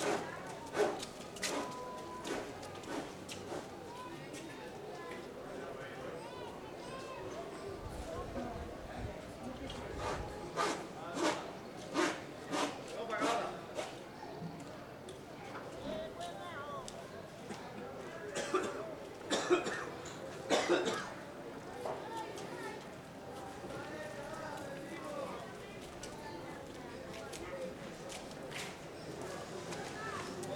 santiago de Cuba, calle, mediodia